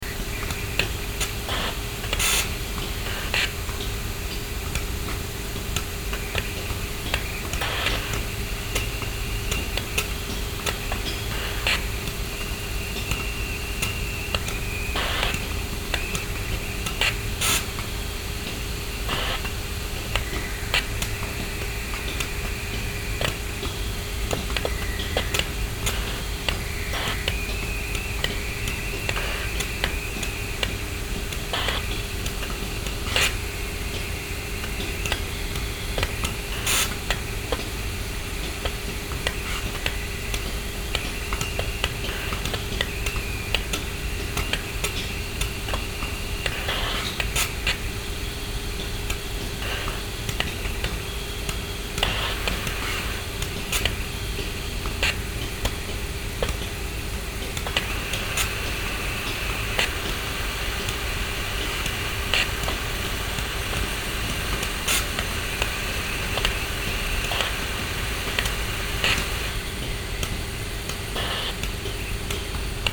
Usine Prayon, Amay, steam lines - Usine Prayon S.A., Amay, steam lines
Large chemical factory. Moisture traps in steam lines spitting out the collected water. Binaural. Zoom H2 with OKM ear mics.